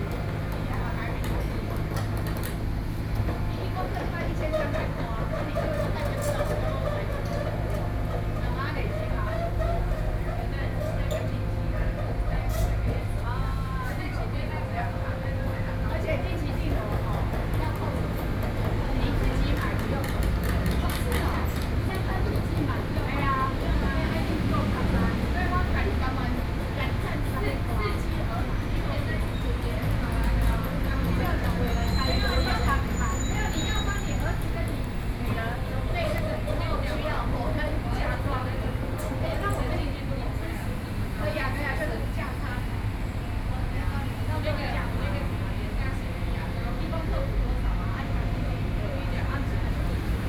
A group of women chatting, The next construction machinery, Sony PCM D50 + Soundman OKM II
台北市 (Taipei City), 中華民國